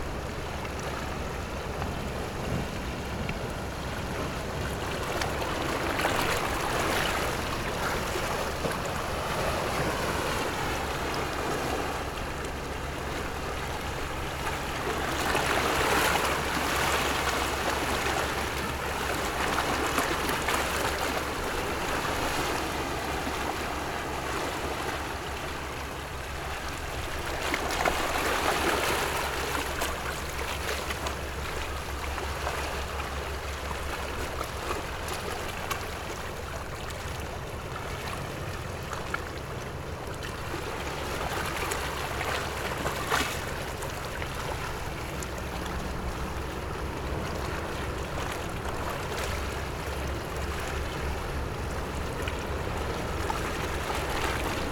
萊萊地質區, Gongliao District - waves
Rocks and waves, Very hot weather, Traffic Sound
Zoom H6 MS+ Rode NT4
Gongliao District, New Taipei City, Taiwan, 29 July 2014